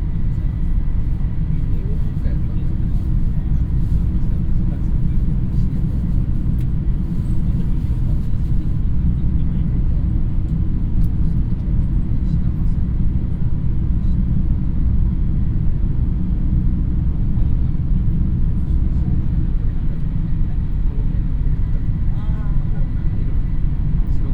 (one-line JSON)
{"title": "inside shinkhansen train", "date": "2010-07-19 18:32:00", "description": "inside the shinkhansen train - coming from tokio - direction takasaki - recorded at and for the world listening day sunday the 18th of july2010\ninternational city scapes - social ambiences and topographic field recordings", "latitude": "36.30", "longitude": "139.02", "altitude": "90", "timezone": "Europe/Berlin"}